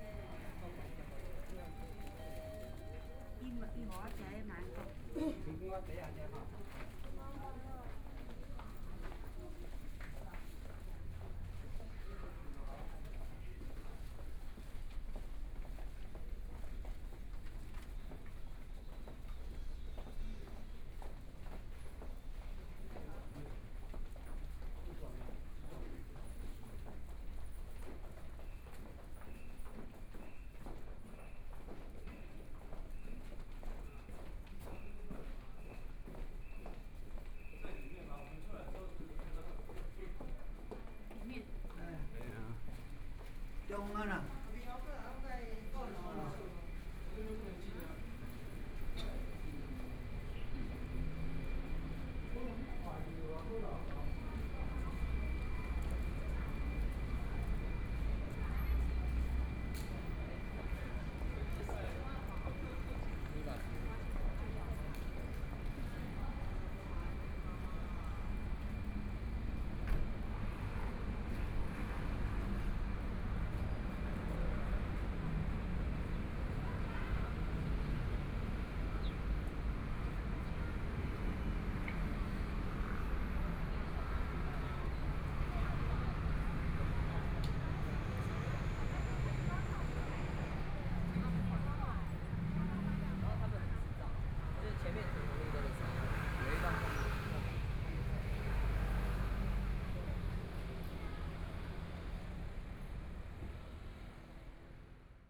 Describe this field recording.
walking In the hospital, Then out of the hospital, Binaural recordings, Zoom H4n+ Soundman OKM II